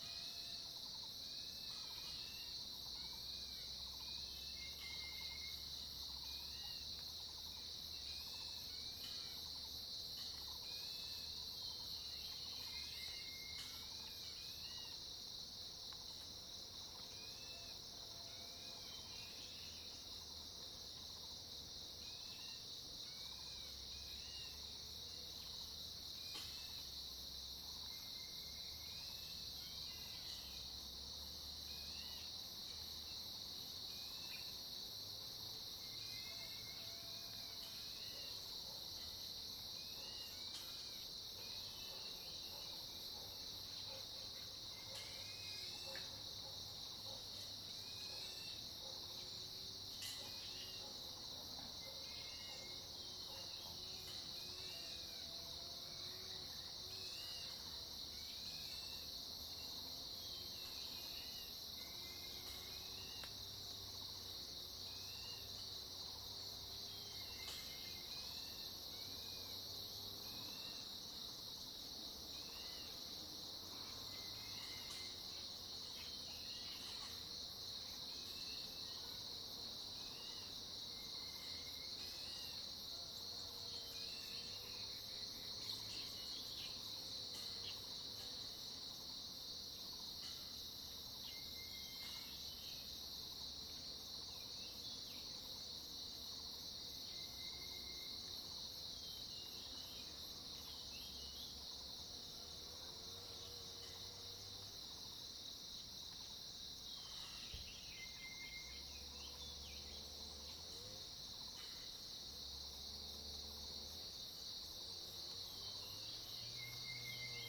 種瓜路, 埔里鎮桃米里 - Morning in the mountain
Early morning, Bird calls, Morning in the mountain
Zoom H2n MS+XY
Puli Township, Nantou County, Taiwan, 10 June